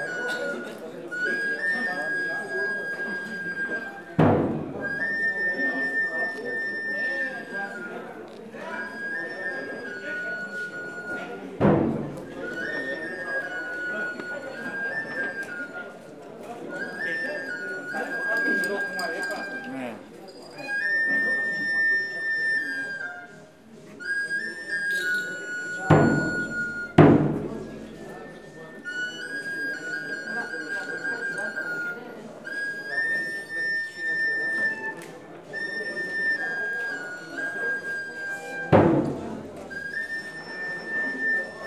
San Pedro La Laguna, Guatemala - Ramos